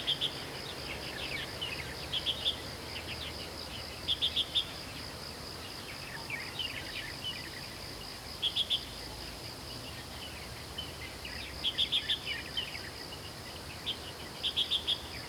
Birds sound, In the morning
Zoom H2n MS+XY